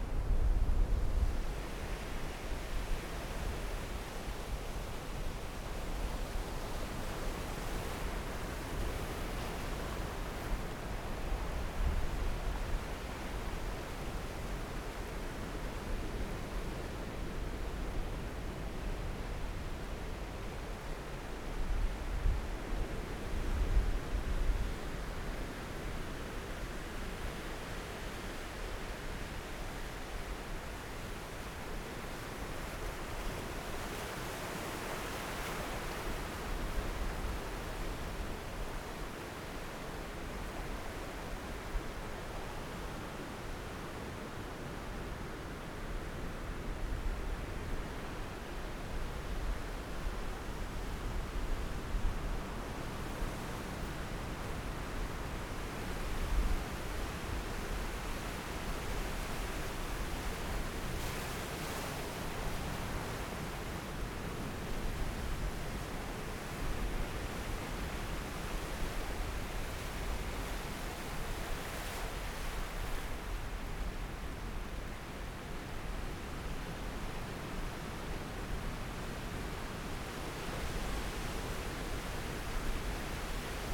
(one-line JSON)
{"title": "Taitung City, Taiwan - Sound of the waves", "date": "2014-01-15 16:09:00", "description": "At the beach, Sound of the waves, Zoom H6 M/S, Rode NT4", "latitude": "22.74", "longitude": "121.15", "timezone": "Asia/Taipei"}